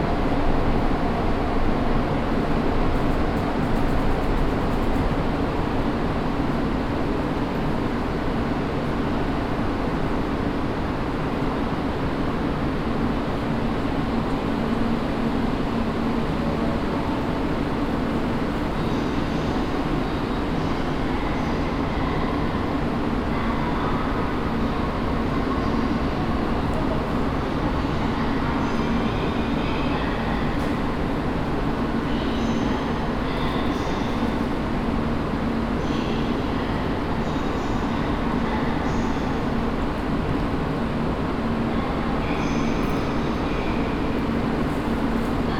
tokio, ueno station
inside the subway railway station. trains passing by and arriving, people passing by
international city scapes and social ambiences
23 July, 13:17